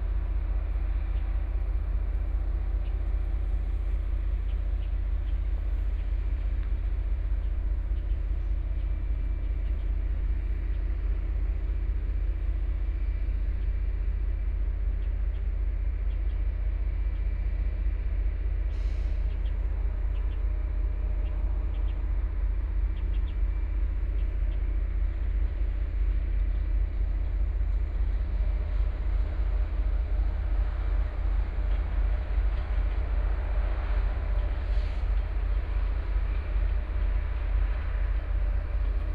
Wenchang Rd., Taitung City - Environmental sounds
Environmental sounds, Noise Station, Birds, Binaural recordings, Zoom H4n+ Soundman OKM II ( SoundMap2014016 -8)